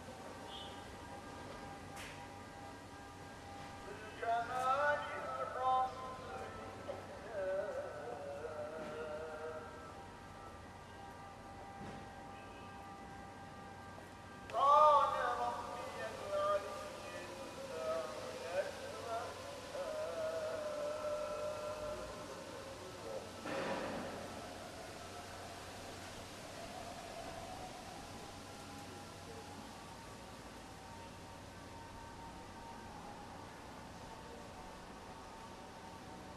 Muezzin of Kalenderhane Camii, Slowing down the rush
Afternoon ezan from this old mosque hidden behind decrepit city walls in Fatih. The muezzin is singing with a miraculous slowliness and procrastination, hereby countering the citys ubiquious rush.